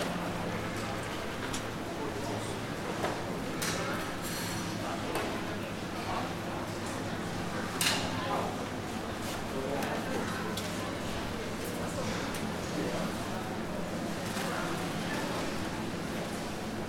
Suchsdorf, Kiel, Deutschland - Supermarket
Walking though the aisles of a supermarket, different sounds, people, bone saw at the butcher
iPhone 6s plus with Shure MV88 microphone
Kiel, Germany, 4 March 2017